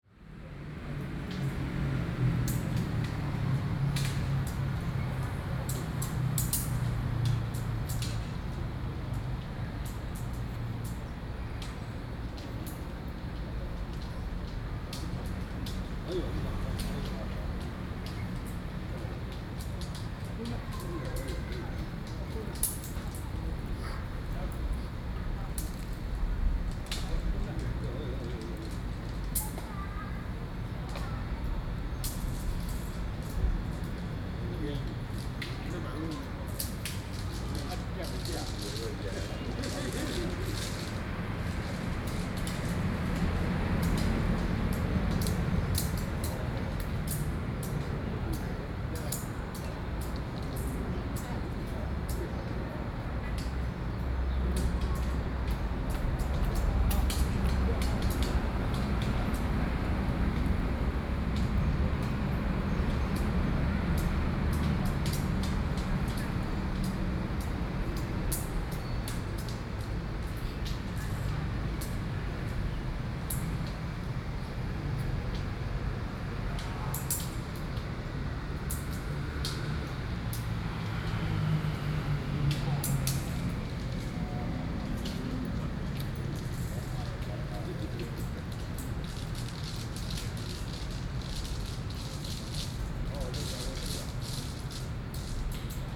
義仁社區公園, Taishan Dist. - In community park
In community park, A group of people playing chess, traffic sound
Zoom H4n + Rode NT4
Taishan District, New Taipei City, Taiwan, 8 July 2012